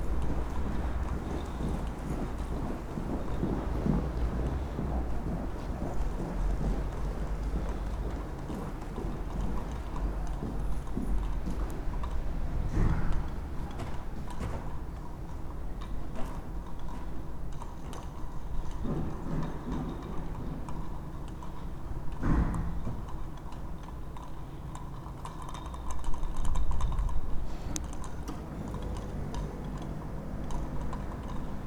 Lithuania, Utena, in a yard
a yard between two buildings. passengers, street ambience, some sound installation above